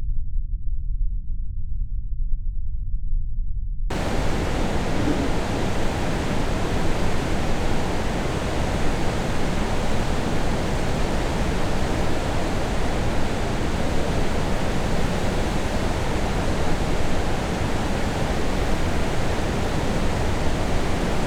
Uieum Dam after heavy rains
After several weeks of heavy rains. Uieum Dam perspectives (in order) downstream safety railing, downstream aspect, lamp post, upstream aspect, downstream aspect, upstream aspect.
강원도, 대한민국, August 2020